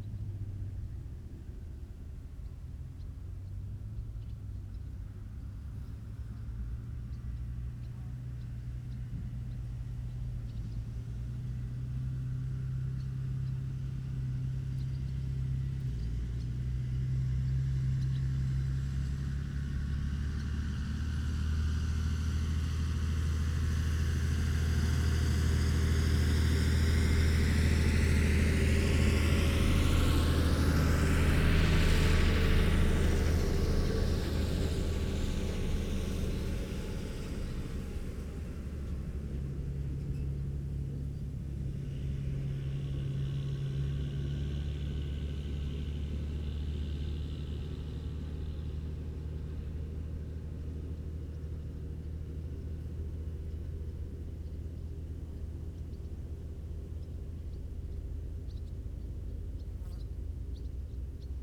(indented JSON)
{
  "title": "Green Ln, Malton, UK - Combine harvesting ...",
  "date": "2017-08-21 12:00:00",
  "description": "Combine harvesting ... plus the movement of tractors and trailers ... open lavalier mics clipped to sandwich box ...",
  "latitude": "54.13",
  "longitude": "-0.55",
  "altitude": "81",
  "timezone": "Europe/London"
}